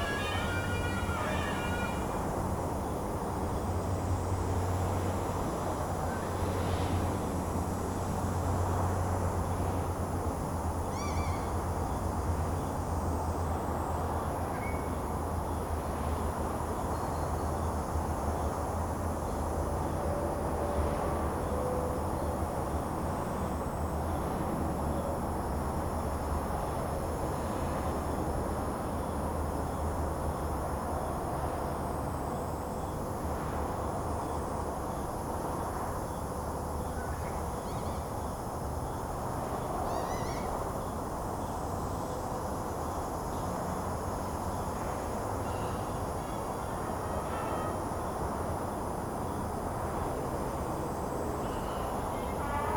仁和步道, Hukou Township - under the high-speed railroads
under high-speed railroads, traffic sound, birds sound, Suona, Zoom H2n MS+XY
Hsinchu County, Taiwan